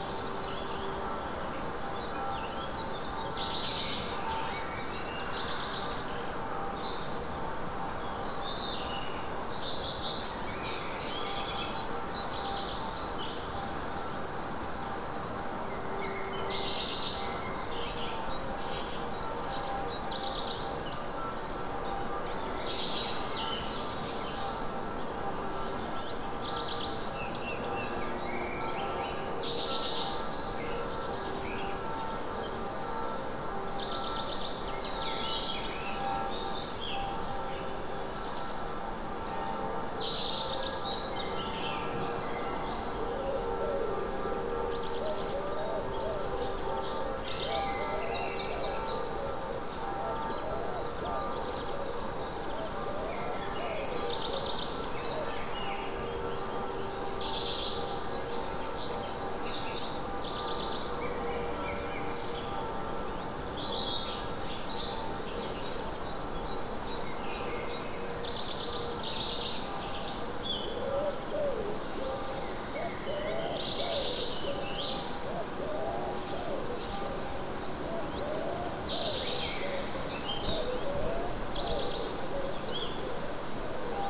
{"title": "Szyb Pszczelnik, Siemianowice Śląskie, Polska - Cmentarz Ewangelicki", "date": "2019-05-01 07:00:00", "description": "Birds & bells from a Catholic church. Above all you can hear an airplane.", "latitude": "50.30", "longitude": "19.05", "altitude": "269", "timezone": "Europe/Warsaw"}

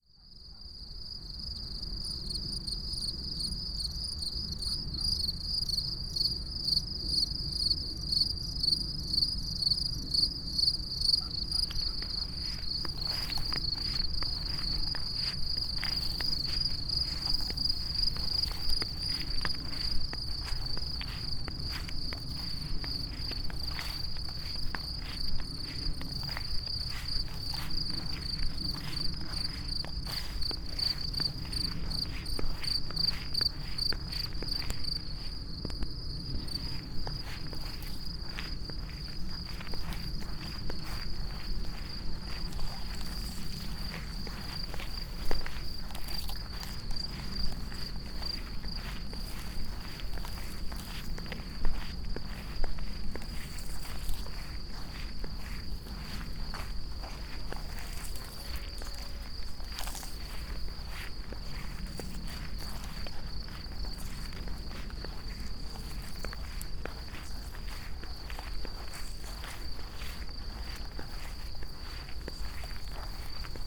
{"title": "path of seasons, june meadow, piramida - evening walk", "date": "2014-06-11 21:12:00", "description": "with book in my hand, birds and crickets allover, distant owl, meadow turning its color into dry straw", "latitude": "46.57", "longitude": "15.65", "altitude": "363", "timezone": "Europe/Ljubljana"}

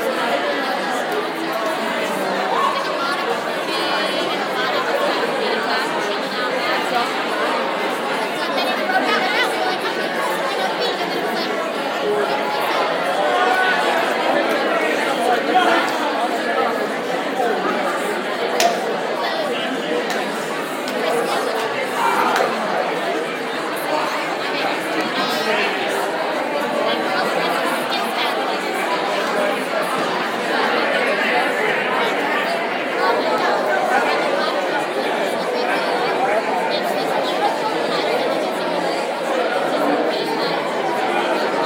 {"title": "Baker Arts Center Lobby, Muhlenberg College, Allentown - CA Galleria, concert intermission", "date": "2014-12-01 22:15:00", "description": "Recording taken during the intermission of an a cappella holiday concert in the lobby of the Center for the arts", "latitude": "40.60", "longitude": "-75.51", "altitude": "120", "timezone": "America/New_York"}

